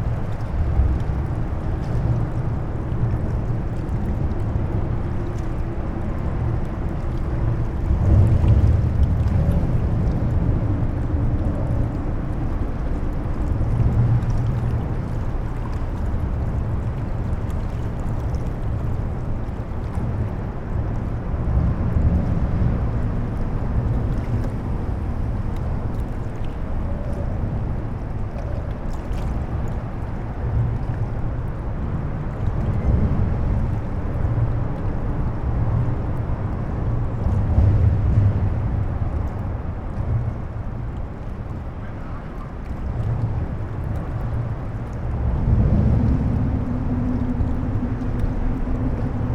under the Praterbrucke, Vienna
under the Praterbrücke